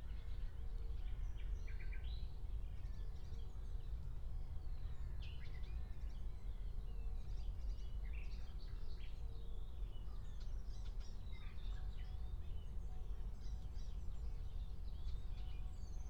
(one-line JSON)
{"title": "Berlin, Tempelhofer Feld - former shooting range, ambience", "date": "2020-06-02 07:00:00", "description": "07:00 Berlin, Tempelhofer Feld", "latitude": "52.48", "longitude": "13.40", "altitude": "44", "timezone": "Europe/Berlin"}